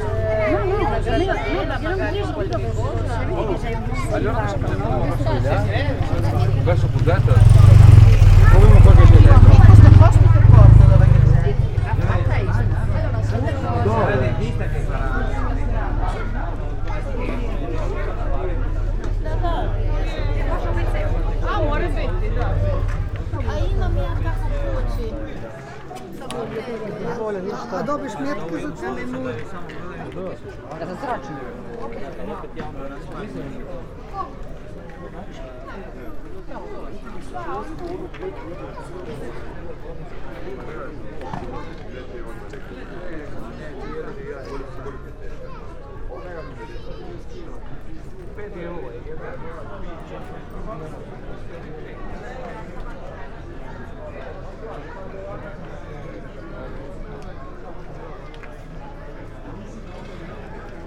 market, Oprtalj, Croatia - small talks
sunday, antique market, slowly walking around, voices - small talks, bargaining, car, vinyl plate
9 September 2012, ~12:00